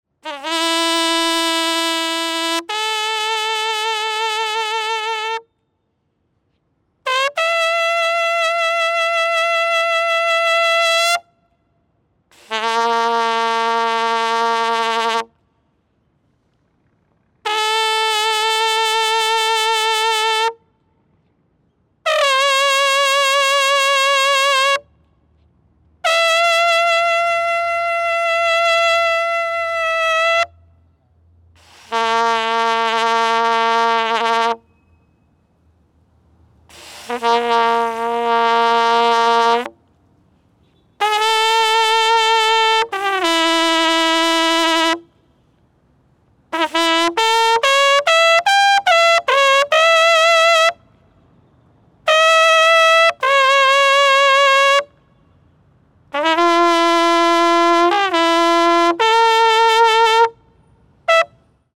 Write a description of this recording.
One young guy playing bugle befor his rehearsal with his military band. Very close recording.